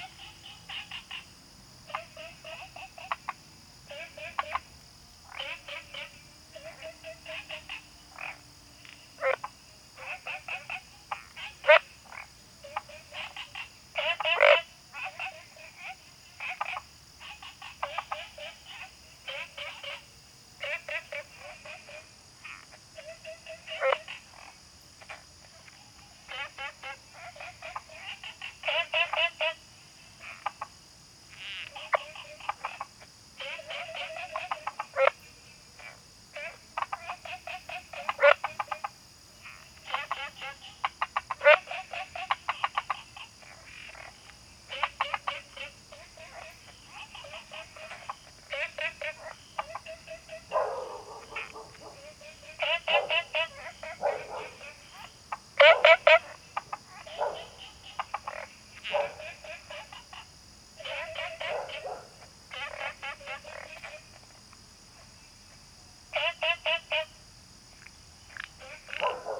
青蛙ㄚ 婆的家, Taomi Ln., Puli Township - Frogs chirping
Frogs chirping, Small ecological pool
Zoom H2n MS+XY